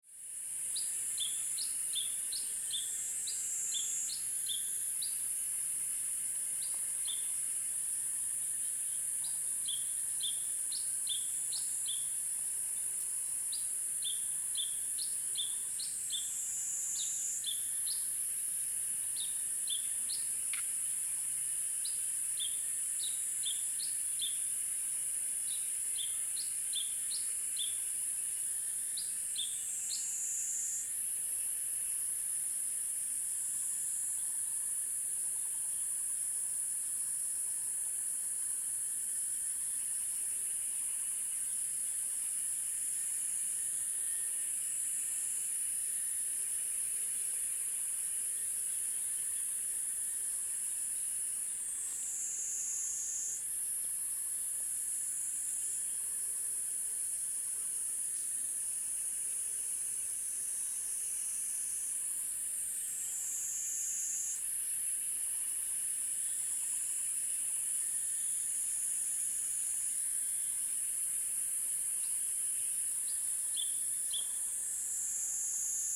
成功里, Puli Township, Taiwan - Birds singing and insect sounds
Birds singing and insect sounds
Zoom H2n MS+XY
Nantou County, Taiwan